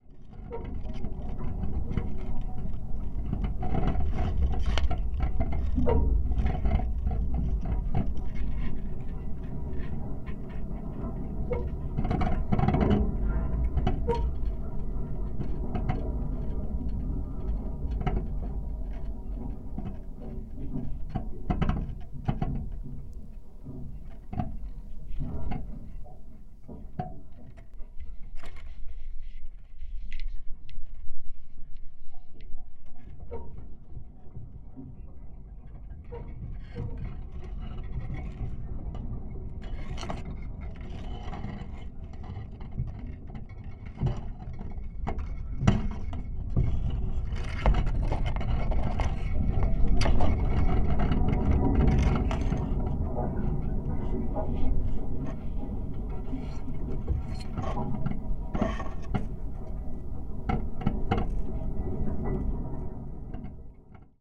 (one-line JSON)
{"title": "Culliford Tree Barrows, Dorset, UK - wire fence", "date": "2015-10-01 11:45:00", "description": "Part of the Sounds of the Neolithic SDRLP project funded by The Heritage Lottery Fund and WDDC.", "latitude": "50.67", "longitude": "-2.43", "altitude": "146", "timezone": "Europe/London"}